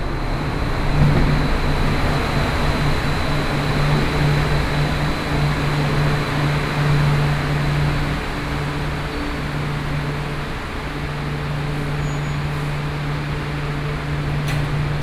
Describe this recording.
Walking into the MRT station, Aircraft flying through, traffic sound